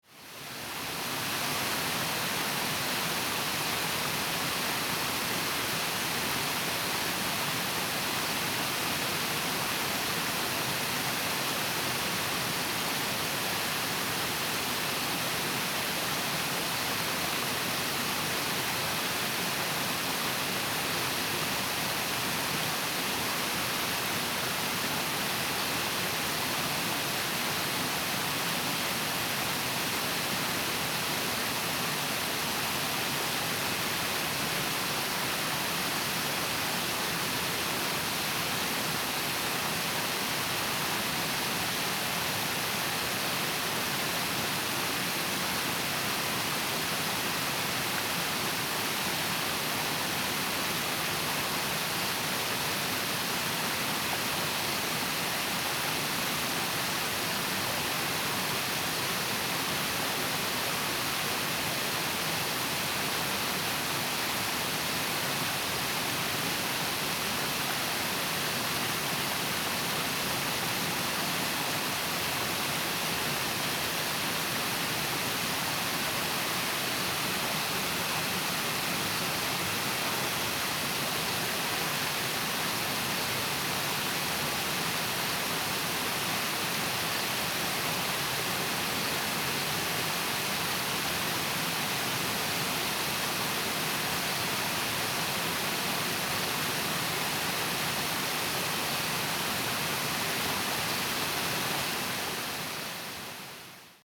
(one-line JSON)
{"title": "茅埔坑溪, 埔里鎮桃米里 - The sound of water streams", "date": "2015-08-10 20:28:00", "description": "The sound of water streams, Insects sounds, Small village night\nZoom H2n MS+ XY", "latitude": "23.94", "longitude": "120.94", "altitude": "491", "timezone": "Asia/Taipei"}